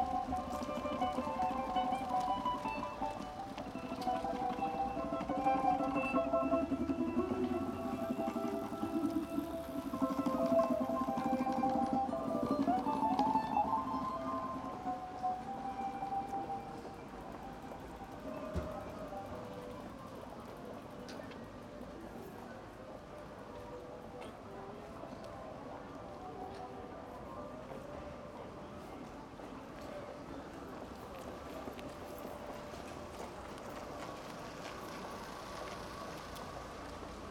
Herbert-von-Karajan-Straße, Berlin, Germany - Berliner Philharmonie